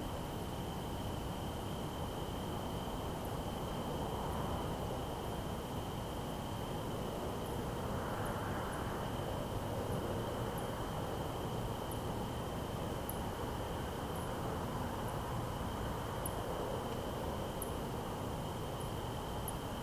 Mijas, Prowincja Malaga, Hiszpania - Night in Mijas
Late night in Mijas, with crickets and nearby highway as the leads. Recorded with Zoom H2n.